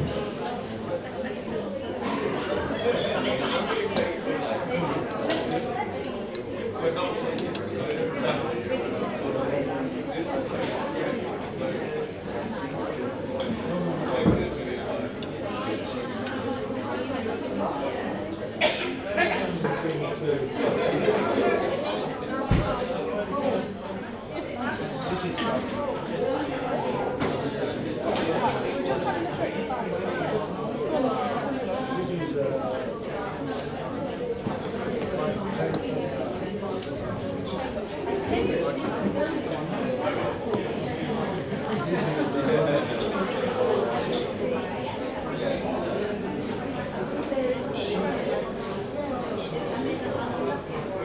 Royal Academy of Arts, Burlington House, Piccadilly, Mayfair, London, UK - Royal Academy of Arts Members cafe.
Royal Academy of Arts Members cafe. Recorded on a Zoom H2n.